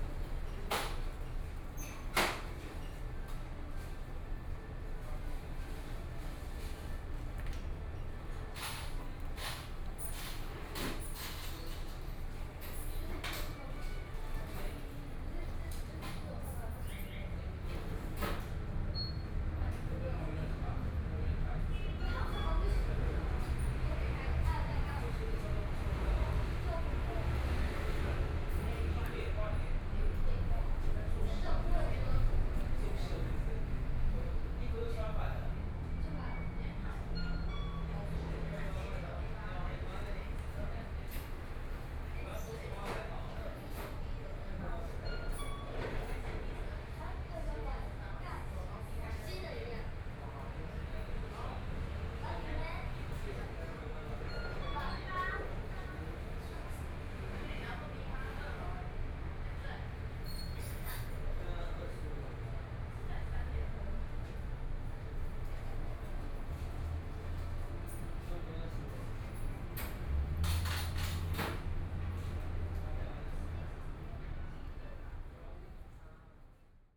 內湖區港富里, Taipei City - In the convenience store
In the convenience store
Binaural recordings
Taipei City, Taiwan, 19 March, 20:56